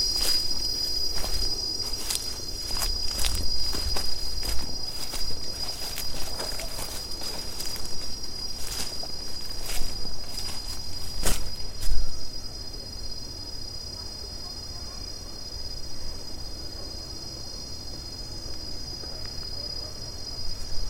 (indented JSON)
{
  "title": "Entoto, Addis-Abeba, Oromia, Éthiopie - forestfish",
  "date": "2011-11-13 15:43:00",
  "description": "Eucalyptus trees on the hills of Entoto(ge'ez : እንጦጦ)\nreaching for the forestfish",
  "latitude": "9.09",
  "longitude": "38.76",
  "altitude": "2883",
  "timezone": "Africa/Addis_Ababa"
}